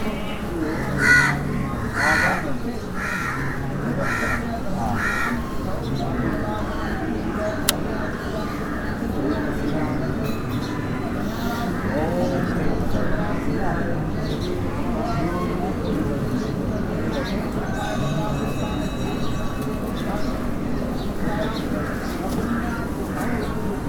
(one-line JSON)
{"title": "Banganga Tank, Malabar Hill, Mumbai, Maharashtra, Inde - Brahmins at work around the tank", "date": "2015-10-07 12:47:00", "latitude": "18.95", "longitude": "72.79", "altitude": "11", "timezone": "Asia/Kolkata"}